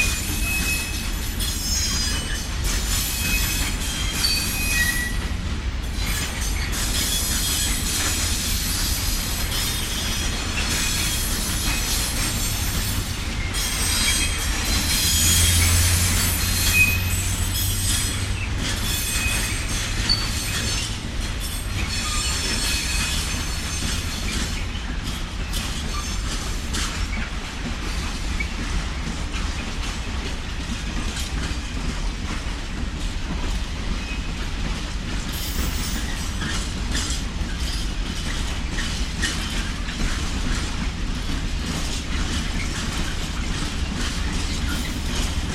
{
  "title": "freight train, Montzen",
  "date": "2007-10-07 19:50:00",
  "description": "Montzen goods station, freight train with 2 Belgian class 55 GM diesels, revving engines and then driving off. Zoom H2.",
  "latitude": "50.73",
  "longitude": "5.93",
  "altitude": "207",
  "timezone": "Europe/Berlin"
}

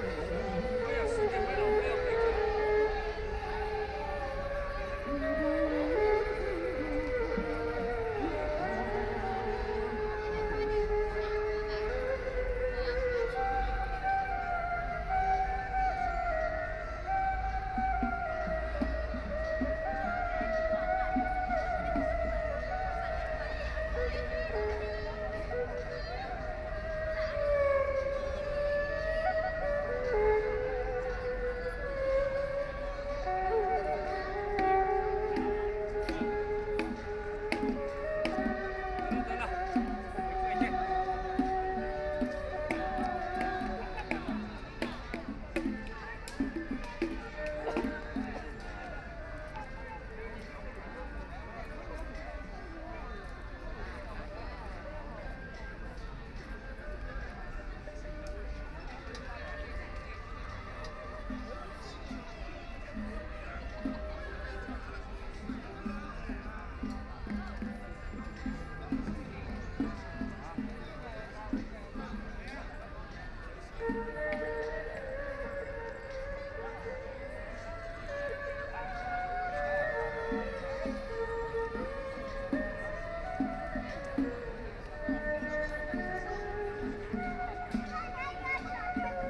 {
  "title": "Heping Park, Hongkou District - Erhu and shǒu gǔ",
  "date": "2013-11-23 10:33:00",
  "description": "Erhu and shǒu gǔ, Various performances in the park, Binaural recording, Zoom H6+ Soundman OKM II",
  "latitude": "31.27",
  "longitude": "121.50",
  "altitude": "9",
  "timezone": "Asia/Shanghai"
}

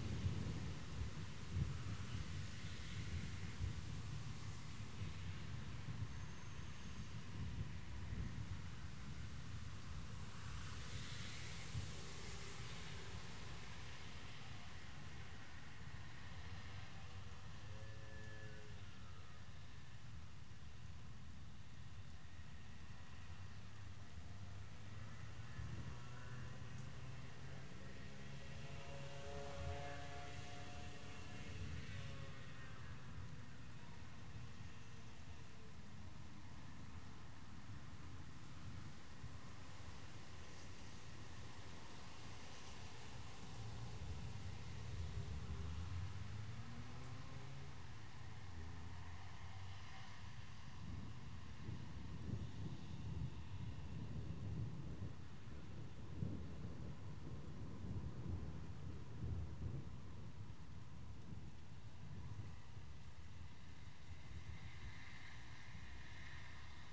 {
  "title": "Coryluslaan, Heerhugowaard, Nederland - Rain, thunder",
  "date": "2019-06-04 21:55:00",
  "description": "Recorder : Sony PCM-D100\nMicrophones : Clippy EM172\nBest sound : Use a headphone for most realistic sound.\nMade the recording out of window second floor, about 8 meter from the pavement. Outside in garden of neighbours was a party tent with an plastic material roof. Further on is the road about 30 meters after the house. I placed the tiny clippy EM172 stereo microphone on a distance of 40 centimeter apart, placed on a wardrobe hanger just outside the window. All start quiet but in the procress you can hear the rain, the sound of rain on the plastic roof of partytent, car passing by on wet pavement and of course the incoming thunder. Max recording level was -6Db.",
  "latitude": "52.66",
  "longitude": "4.83",
  "timezone": "Europe/Amsterdam"
}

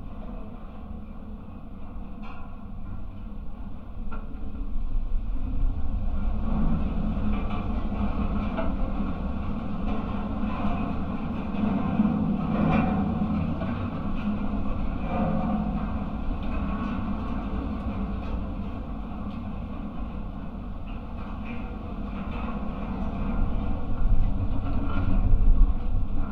{
  "title": "Utena, Lithuania, railway light tower",
  "date": "2018-09-16 16:45:00",
  "description": "contact microphones on abandones railway light tower",
  "latitude": "55.49",
  "longitude": "25.64",
  "altitude": "140",
  "timezone": "GMT+1"
}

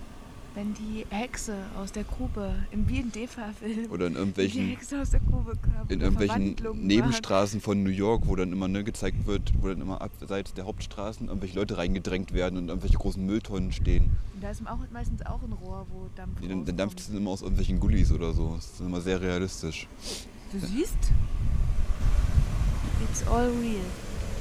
{
  "title": "Johannesvorstadt, Erfurt, Deutschland - Die Nachtwanderer - Industrierohre",
  "date": "2013-02-05 02:00:00",
  "description": "industrial pipes...walking and talking through the night...finding places and sounds...\nwondering...remembering...",
  "latitude": "51.00",
  "longitude": "11.04",
  "altitude": "185",
  "timezone": "Europe/Berlin"
}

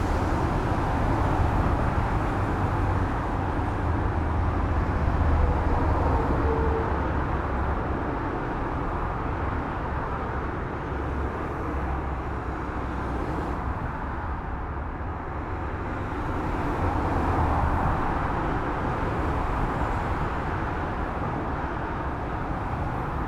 {
  "title": "Motorway Bridge, Athens, Greece - Pedestrian Bridge over Motorway",
  "date": "2019-06-19 19:00:00",
  "description": "Standing in the centre of the motorway pedestrian bridge, inbetween the two directions of travel directly below, at evening rush hour. Heavy traffic in one direction (out of Athens) and lighter traffic in the other (towards Athens). DPA4060 to Tascam HDP-1.",
  "latitude": "38.09",
  "longitude": "23.79",
  "altitude": "236",
  "timezone": "Europe/Athens"
}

